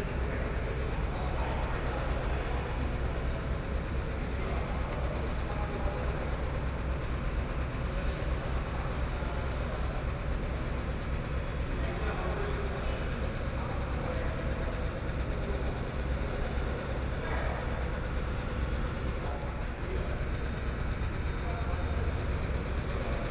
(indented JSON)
{
  "title": "Zhongxiao W. Rd., Zhongzheng Dist. - Construction noise",
  "date": "2014-01-21 14:13:00",
  "description": "Construction noise, In the lobby of the building, Binaural recordings, Zoom H4n+ Soundman OKM II",
  "latitude": "25.05",
  "longitude": "121.52",
  "timezone": "Asia/Taipei"
}